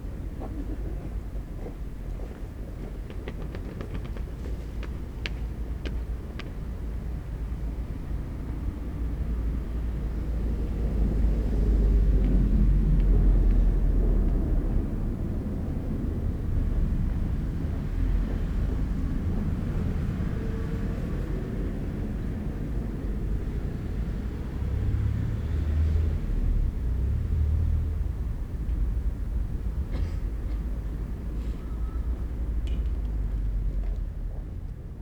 Berlin: Vermessungspunkt Friedelstraße / Maybachufer - Klangvermessung Kreuzkölln ::: 02.12.2010 ::: 19:26